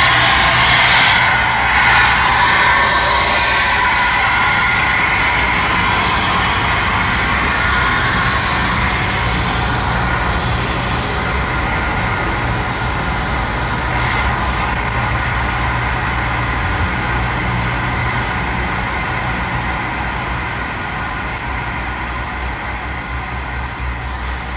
Haugesund, Norwegen - Norway, Haugesund, harbour
At the promenade of the harnour in Haugesund on a mild windy summer day. The harbour atmosphere with vivid birds, passengers talking, a water pump and sounds of ship motors.
international sound scapes - topographic field recordings and social ambiences